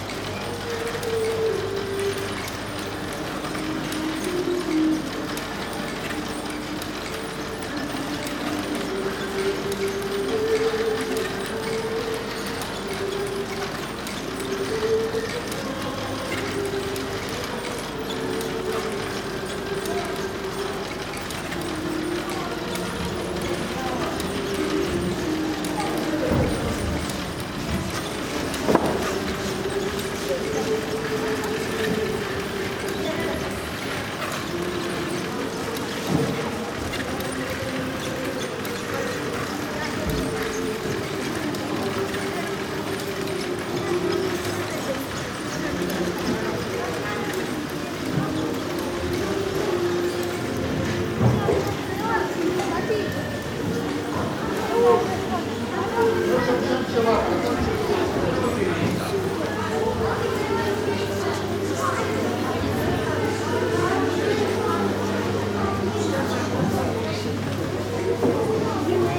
Installation of small mechanical figurines that dance and pray around a nativity. A class of children walks in during the recording.
28 October 2009, 15:54